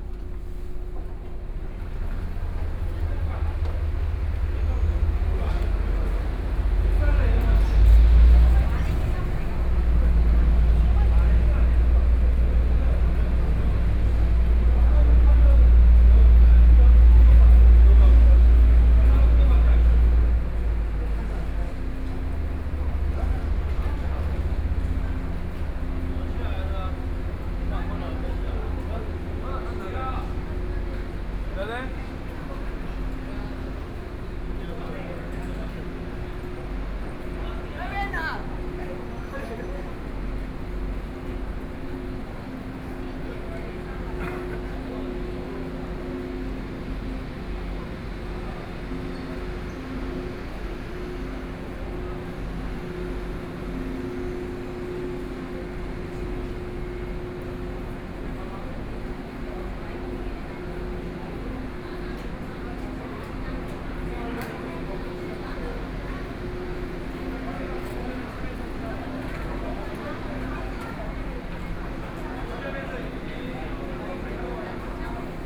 Hualien Station, Taiwan - walk in the Street
Walked through the underpass from the station platform to the station exit, Binaural recordings, Zoom H4n+ Soundman OKM II